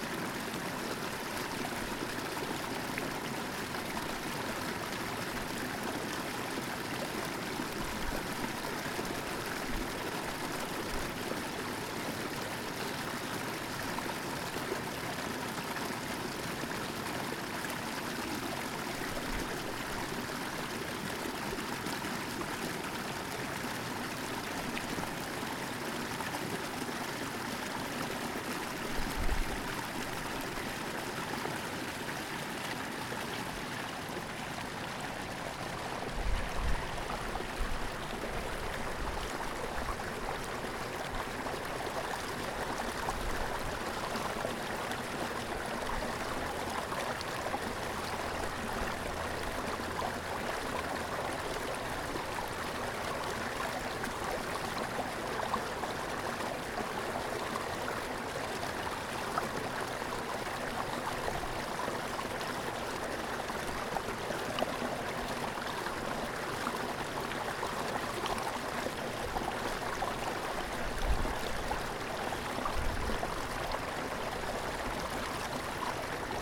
Pikes Peak Greenway Trail, Colorado Springs, CO, USA - Monument Creek Rapids
Water moving over rocks and submerged PVC pipe in a small waterfall in Monument Creek